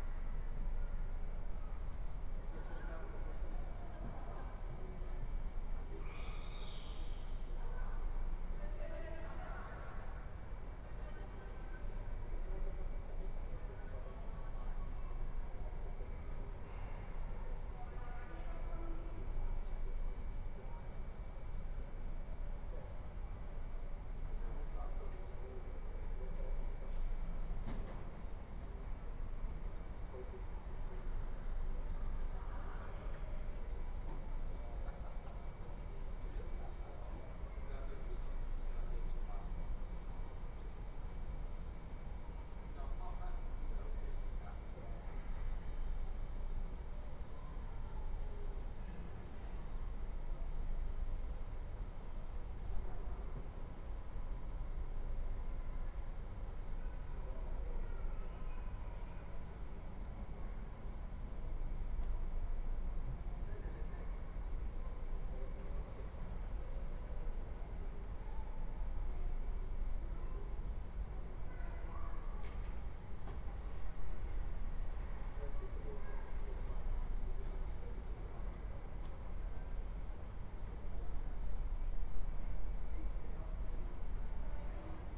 Ascolto il tuo cuore, città, I listen to your heart, city. Several chapters **SCROLL DOWN FOR ALL RECORDINGS** - Round Noon bells on Sunday from terrace in the time of COVID19, Soundscape
"Round Noon bells on Sunday from terrace in the time of COVID19" Soundscape
Chapter XXXIV of Ascolto il tuo cuore, città, I listen to your heart, city.
Sunday April 5th 2020. Fixed position on an internal terrace at San Salvario district Turin, twenty six days after emergency disposition due to the epidemic of COVID19.
Start at 11:52 a.m. end at 00:22 p.m. duration of recording 29’23”.
Piemonte, Italia